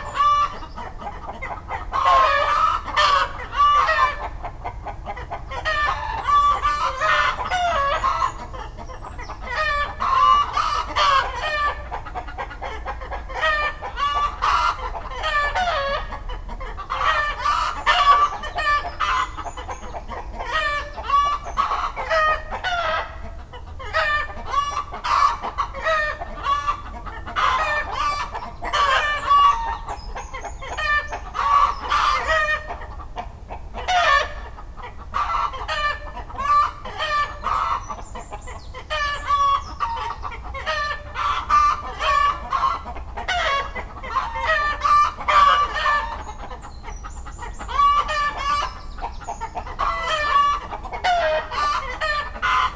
Court-St.-Étienne, Belgique - Hens are afraid
Going to work by bike, I had the great idea to record the rooster shouting, before the noisy and heavy cars trafic charge. But, this is a dark place here. After 45 seconds, hens are afraid of me. Early in the morning, this made a great hens and rooster song ! I guess neighbors were happy !
Court-St.-Étienne, Belgium, 9 September 2015